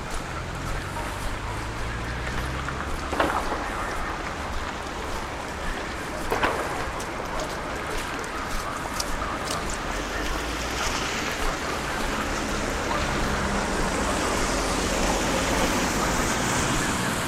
Wintertime in Helsinki, by the end of the nighty day. Some public spaces are transformed into ice hockey stadium. Walking along the street, one can hear the sound of pucks shocking on the wood borders, the comments on the sport radio & the screams of the players reverberated on the buildings around. Snowy environment can also be heard in the footsteps & specific sound of cars & trams rolling by.